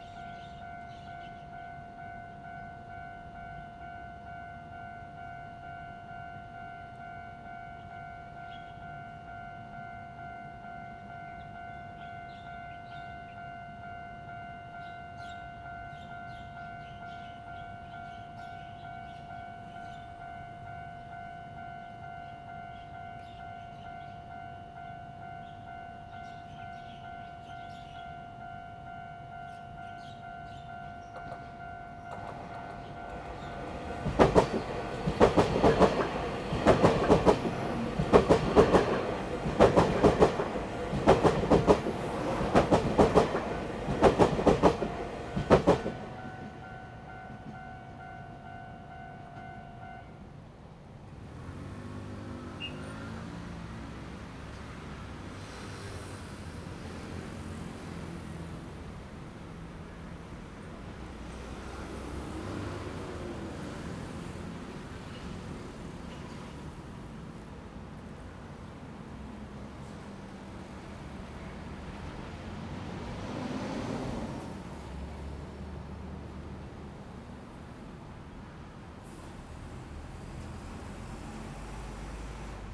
{"title": "Jianguo E. Rd., Taoyuan Dist. - train runs through", "date": "2017-07-27 09:50:00", "description": "Next to the railroad track, Traffic sound, The train runs through, Zoom H2n Spatial", "latitude": "24.99", "longitude": "121.32", "altitude": "100", "timezone": "Asia/Taipei"}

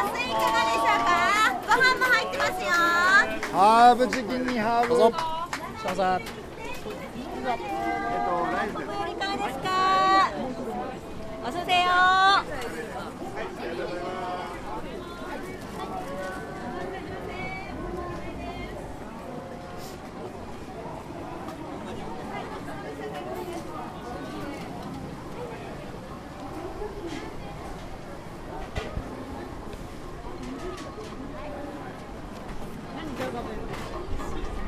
{"title": "yatais@Meiji Shrine", "description": "A yatai is a small, mobile food stall in Japan.", "latitude": "35.67", "longitude": "139.70", "altitude": "36", "timezone": "GMT+1"}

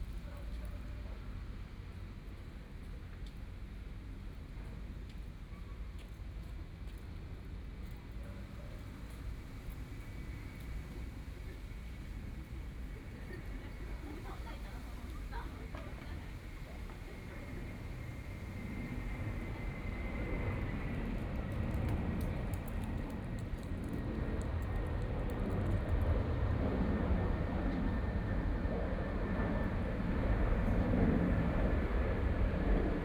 {"title": "碧湖公園, Neihu District - in the Park", "date": "2014-02-27 14:41:00", "description": "in the Park, Distant school students are practicing traditional musical instruments, Aircraft flying through\nBinaural recordings, Sony PCM D100 + Soundman OKM II", "latitude": "25.08", "longitude": "121.58", "timezone": "Asia/Taipei"}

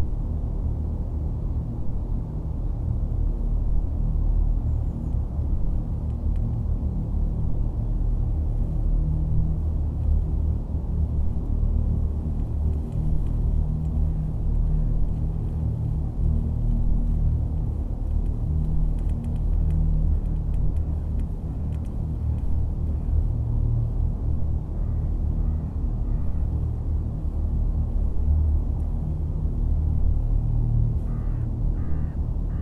{"title": "Newton St. Loe, Bath, UK - Newton Park: Plastic Pipe Drone.", "date": "2016-03-21 08:00:00", "description": "Recorded at Newton Park using a Zoom H4 & its built in microphones. The recording is the result of placing the H4's mic capsules just inside the opening of a discarded piece of industrial grade plastic pipe. The pipe was left on grass verge & was approx 4m in length and approx 15cm in diameter. The pipe has since been removed.", "latitude": "51.38", "longitude": "-2.43", "altitude": "65", "timezone": "Europe/London"}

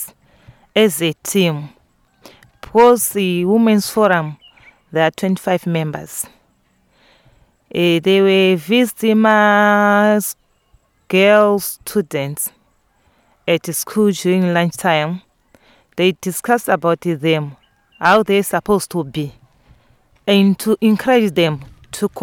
{"title": "Chibondo Primary, Binga, Zimbabwe - Margaret summerizes...", "date": "2016-07-08 09:00:00", "description": "Margaret Munkuli gives an English summary of Maria's speech.", "latitude": "-17.76", "longitude": "27.41", "altitude": "628", "timezone": "Africa/Harare"}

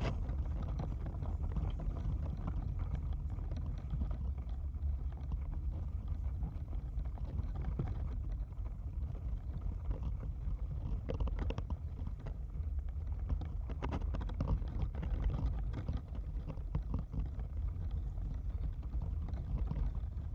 {"title": "Parallel sonic worlds: Birchtrees & Tate Modern riverside, Bankside, London, UK - Parallel sonic worlds: Birchtrees & Tate Modern riverside", "date": "2022-05-16 13:44:00", "description": "The bright green birch leaves looked beautiful as they shimmered in the wind on this sunny day. The sound is quiet but easy to hear. The movement also creates a vibration in the wood of the tree. This track uses a combination of normal and contact mics to crossfade from the outside atmosphere, where a distant guitarist entertains in front of the Tate Gallery, to the internal fluttering as picked up by a contact mic on the tree itself. When the wind drops the vibration in the wood disappears too.", "latitude": "51.51", "longitude": "-0.10", "altitude": "3", "timezone": "Europe/London"}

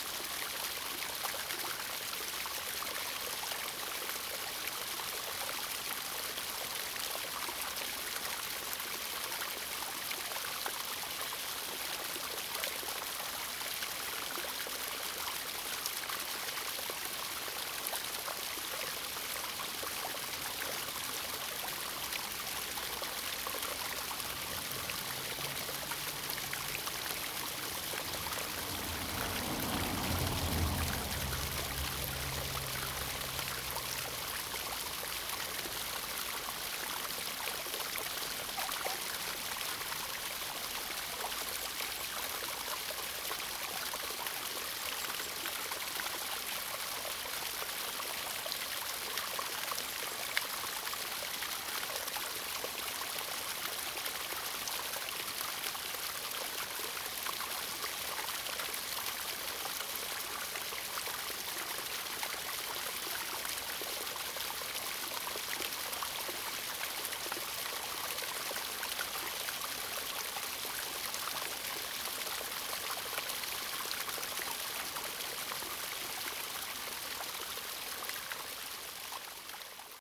{"title": "種瓜路, 埔里鎮Puli Township - Farmland waterways", "date": "2016-04-28 09:37:00", "description": "Farmland waterways, Irrigation channels\nZoom H2n MS+XY", "latitude": "23.95", "longitude": "120.90", "altitude": "520", "timezone": "Asia/Taipei"}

